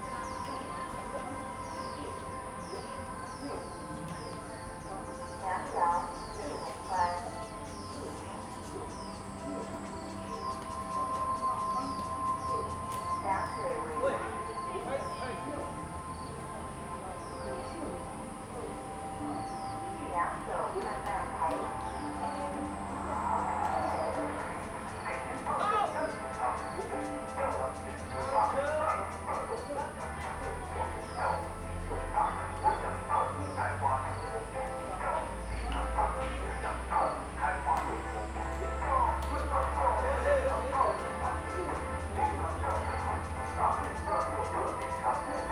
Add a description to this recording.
in the Park, Birds and cicadas, A lot of people are doing aerobics, Playing badminton, Zoom H2n MS+XY